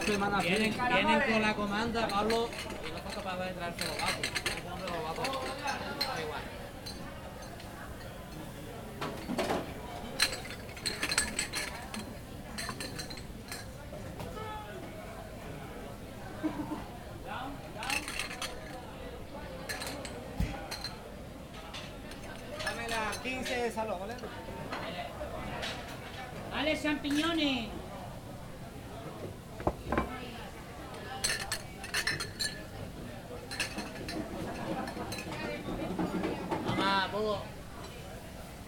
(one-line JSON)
{"title": "Calle Martinez, Callejón de las Gambas, 29001 Malaga, Spain, Una de pescaitos.", "date": "2010-07-18 22:43:00", "latitude": "36.72", "longitude": "-4.42", "altitude": "13", "timezone": "Europe/Madrid"}